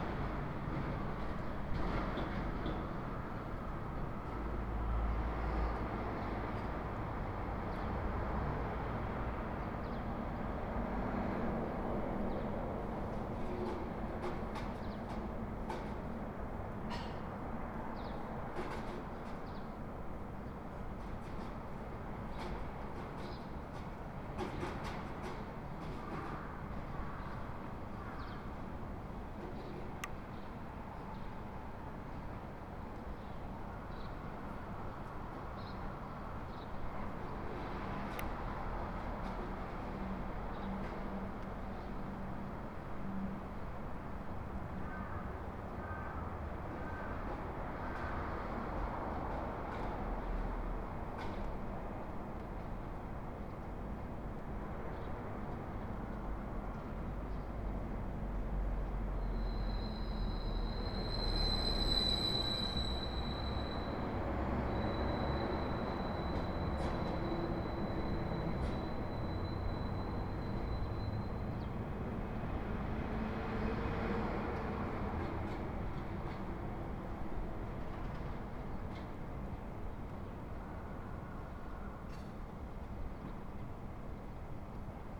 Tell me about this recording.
early morning sounds recorded out of the window of the hotel. mainly garbage man and small restaurants owners cleaning the street, delivery trucks passing pay. very characteristic cawing in the very distance.